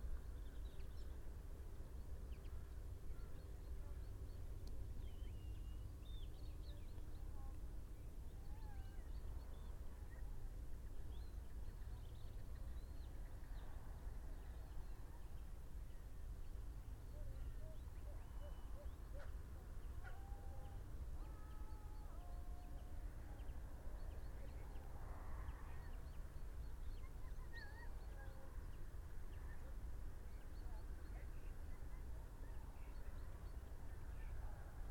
urchins wood, ryedale district ... - horses and hounds ...
horses and hounds ... parabolic ... bird calls ... pied wagtail ... linnet ... crow ... red-legged partridge ...
England, UK, 30 September